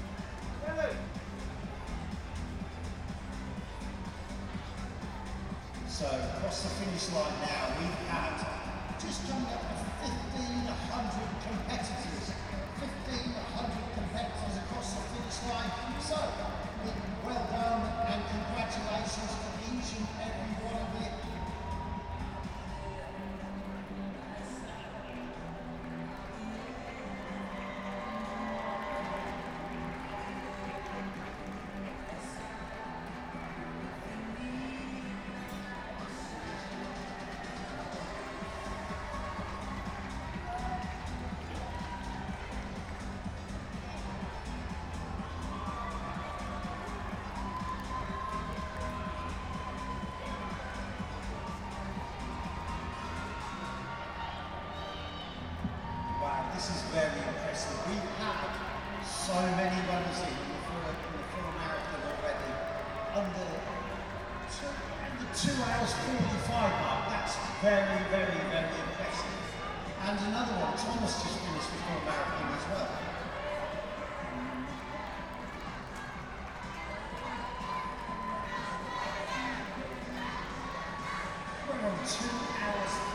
Stadium Mk, Stadium Way West, Bletchley, Milton Keynes, UK - mk marathon ...
mk marathon ... close to finish in the stadium mk ... dpa 4060s clipped to bag to zoom h5 ... plenty of background noise ... levels all over the place ... two family members took part in the super hero fun run ... one member took part in the marathon ...
South East England, England, United Kingdom, 2 May